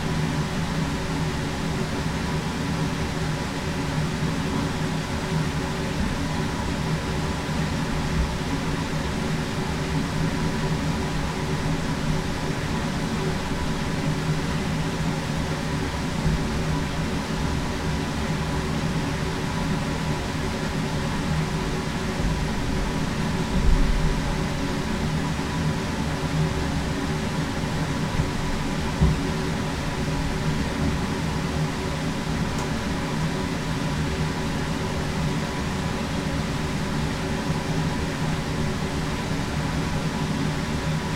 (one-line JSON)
{"title": "mill grain chute", "date": "2011-07-18 15:52:00", "description": "the ahja river resonating inside a wooden grain chute in a ruined mill on the old post road in põlvamaa, estonia. WLD, world listening day", "latitude": "58.01", "longitude": "26.92", "altitude": "92", "timezone": "Europe/Tallinn"}